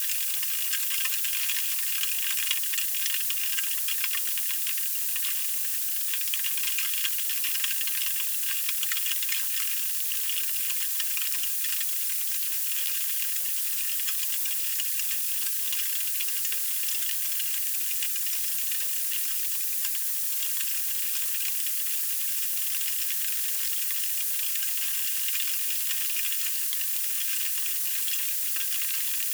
c/ San Cosme y San Damián, Madrid - 2014-02-03 Snow
2014-02-03, Madrid. Snow falling on my roof window.Recorded with a pair of Jez Riley French's contact microphones.
Madrid, Spain, 3 February 2014